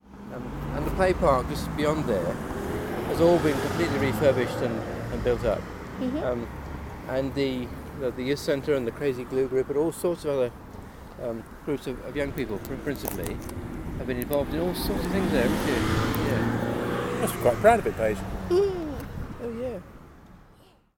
Efford Walk Two: Refurbished playpark - Refurbished playpark
Plymouth, UK